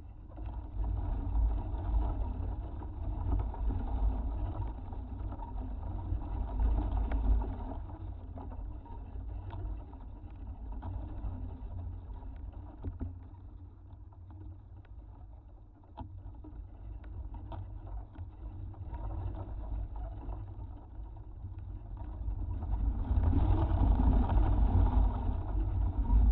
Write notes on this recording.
contact microphone placed om a trunk of palm